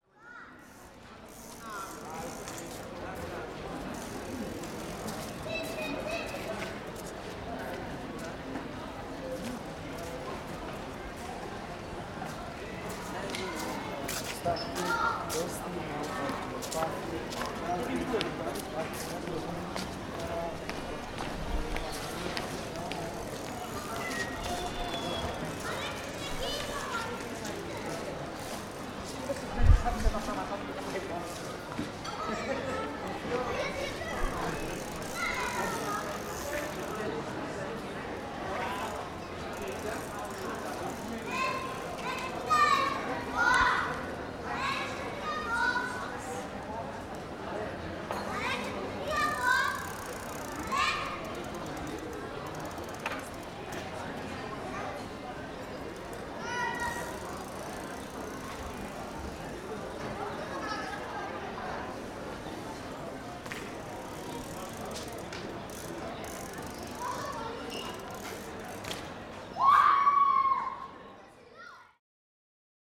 Children play and biking. People chatting at the coffee shops nearby.